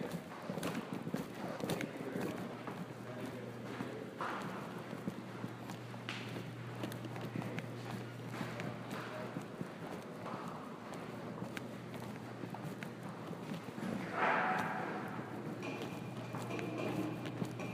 {"title": "Ames, IA, USA - Going to class", "date": "2015-09-23 12:06:00", "latitude": "42.03", "longitude": "-93.65", "altitude": "298", "timezone": "America/Chicago"}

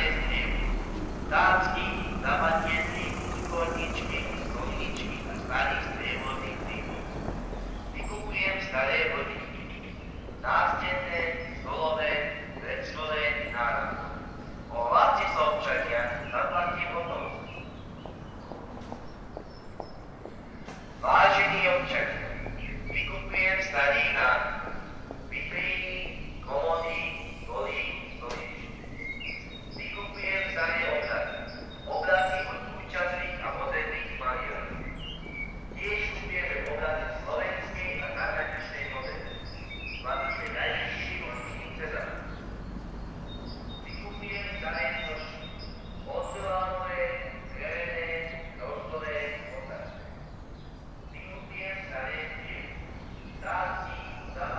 {"title": "Bratislava-Ružinov, Slovakia - Mobile antique buyer", "date": "2015-04-23 11:25:00", "description": "One of the mobile antique buyers, usually Roma people from southern Slovakia, cruising the streets of Bratislava. Binaural recording.", "latitude": "48.15", "longitude": "17.13", "altitude": "139", "timezone": "Europe/Bratislava"}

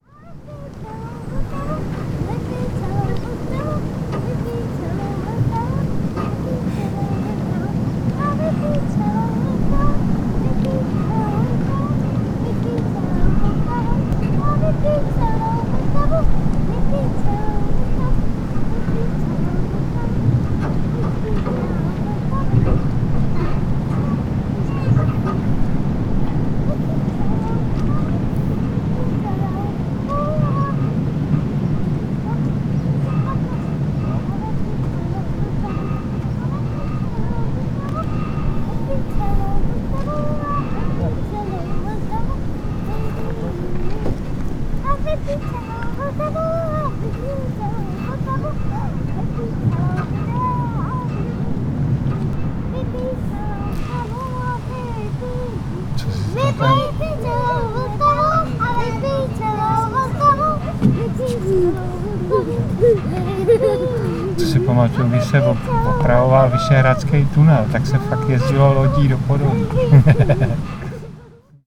{"title": "Císařská louka, Praha, I’ll drink the whole Vltava", "date": "2008-09-04 13:03:00", "description": "While we were waiting for the boatman. I’ll drink the whole Vltava. Like from the fairy tale about gnomes..", "latitude": "50.06", "longitude": "14.41", "altitude": "196", "timezone": "Europe/Prague"}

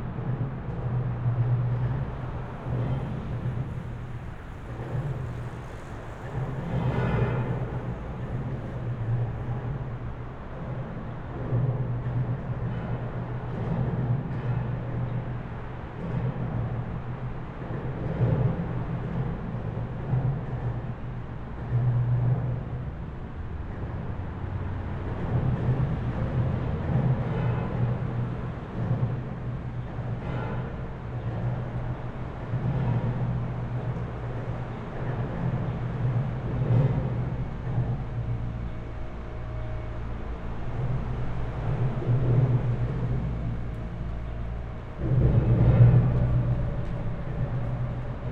{"title": "Berlin Wall of Sound, under the new highway bridge harbour britz-ost - traffic beats under motorway bridge", "date": "2013-08-17 12:00:00", "description": "percussive traffic under motorway bridge\n(SD702, Audio Technica BP4025)", "latitude": "52.46", "longitude": "13.46", "altitude": "32", "timezone": "Europe/Berlin"}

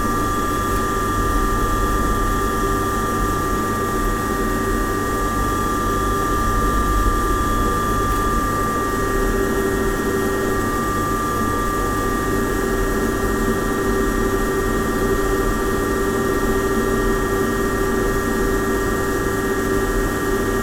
{"title": "Jacksons of Reading basement, Jacksons Corner, Reading, UK - The engine of the Lamson pneumatic change chute system in the basement of Jackson's department store", "date": "2014-01-03 16:31:00", "description": "Jacksons of Reading was a family-owned department store in Reading opened in 1875 by Edward Jackson. The store was kept in the family, and traded goods to the public until December 2013. After its closure, in January 2014, all of the old shop fittings and fixtures - including the last fully operational pneumatic change chute system in the UK - were offered up for sale by public auction. Folk were invited to enter the store to view all the lots in advance of the \"everything must go\" sale auction on Saturday 4th January, 2014. The viewing and the auction provided opportunities to explore all the hidden corners and floors of the shop which were closed off while Jacksons was still trading. This is the drone of the engine in the basement which powered the Lamson Engineering pneumatic change chute system (installed in the 1940s); the Lamson change chute system was bought for £900 by the man who has maintained it for the last 20 years.", "latitude": "51.45", "longitude": "-0.97", "altitude": "45", "timezone": "Europe/London"}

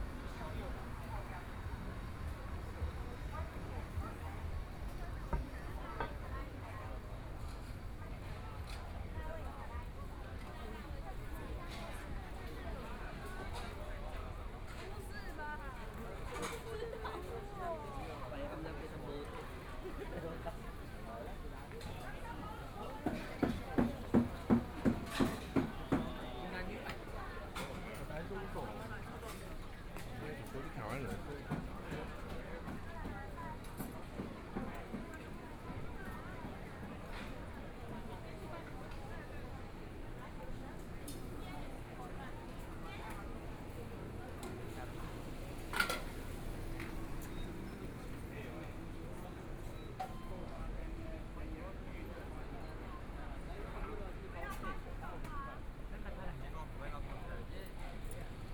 {"title": "Shuangcheng St., Taipei City - Walking in the street", "date": "2014-02-17 19:50:00", "description": "Walking towards the north direction, Traffic Sound, Sound a variety of shops and restaurants\nPlease turn up the volume a little.\nBinaural recordings, Zoom 4n+ Soundman OKM II", "latitude": "25.06", "longitude": "121.52", "timezone": "Asia/Taipei"}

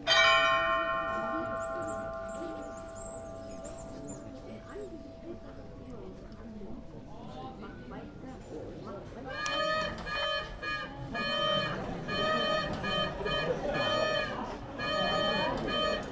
{"title": "Olomouc, Czech Republic - Astronomical clock (Orloj)", "date": "2007-07-27 11:57:00", "description": "The astronomical clock in Olomouc is unique in its design -though it is originally Renaissance, the external part has been completely rebuilt after the WWII in order to celebrate communist ideology. Today a weird open-air memento of how lovely a killing beast can appear to those, who know nothing.\nwwwOsoundzooOcz", "latitude": "49.59", "longitude": "17.25", "altitude": "227", "timezone": "Europe/Prague"}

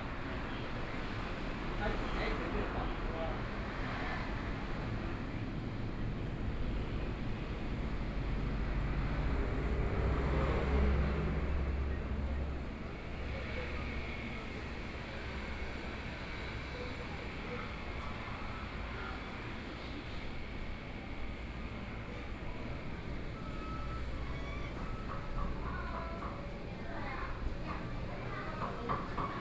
{"title": "鹽埕區中原里, Kaohsiung City - Walking through the alleys", "date": "2014-05-16 09:47:00", "description": "Walking through the alleys", "latitude": "22.63", "longitude": "120.28", "altitude": "9", "timezone": "Asia/Taipei"}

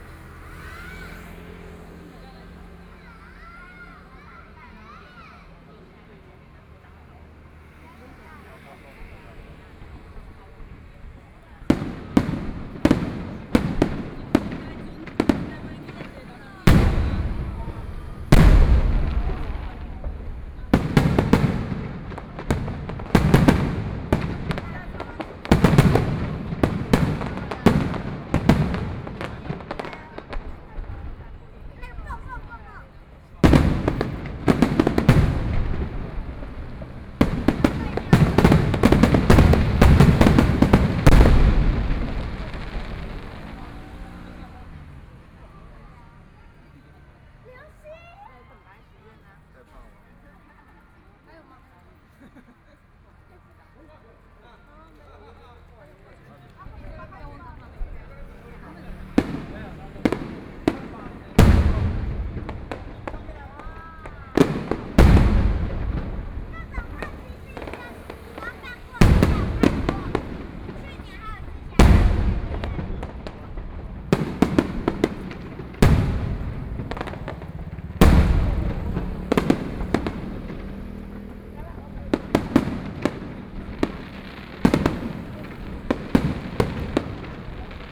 {"title": "Lishan St., Neihu Dist. - the sound of fireworks", "date": "2014-04-12 21:01:00", "description": "Distance came the sound of fireworks, Traffic Sound\nPlease turn up the volume a little. Binaural recordings, Sony PCM D100+ Soundman OKM II", "latitude": "25.08", "longitude": "121.58", "altitude": "13", "timezone": "Asia/Taipei"}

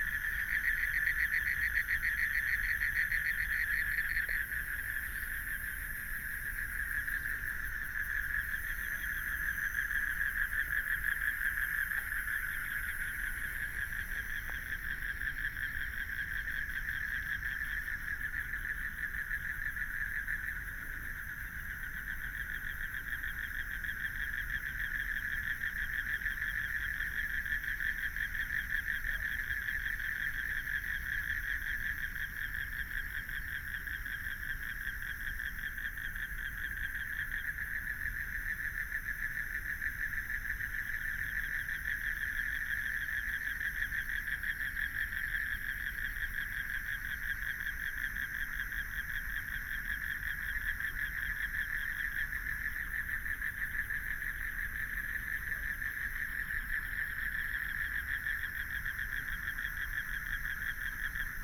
{"title": "關渡里, Beitou District - Frogs sound", "date": "2014-03-18 19:53:00", "description": "Frogs sound, Traffic Sound, Environmental Noise\nBinaural recordings\nSony PCM D100+ Soundman OKM II SoundMap20140318-4)", "latitude": "25.12", "longitude": "121.47", "timezone": "Asia/Taipei"}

